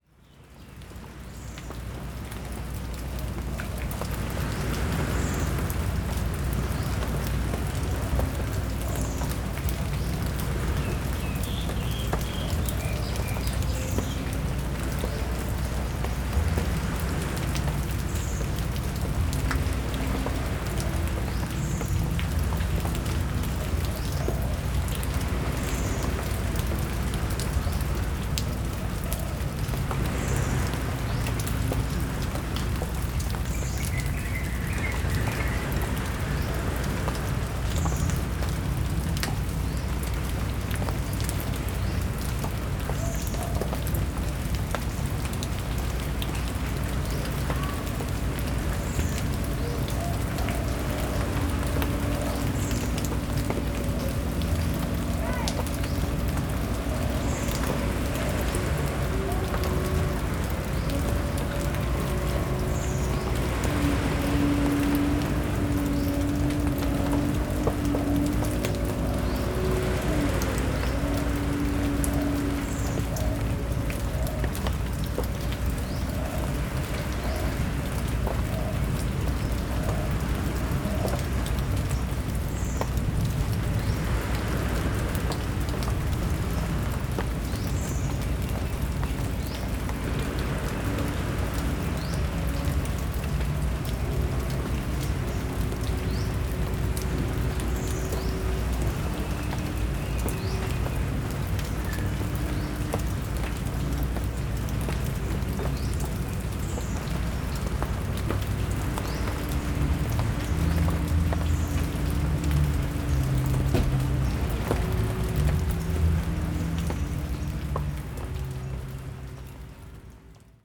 Kampung Lubok Buaya, Langkawi, Kedah, Malaysia - drone log 24/02/2013
Pantai Cenang, Langkawi, rain under big tree, ocean waves, distant traffic drone
(zoom h2, binaural)